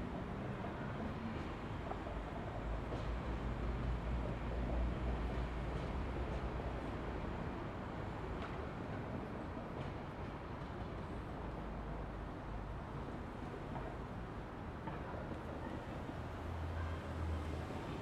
North Dock, Dublin, Ireland - Mayor Street Lower
Quiet and peaceful evening start on Saint Patrick's day. The small amount of vehicles let the ear to pay attention to many other sound sources that coexist along the street. This is the soundwalk's final stop on my visit to Dublin.
You can listen the rest of it on the link below.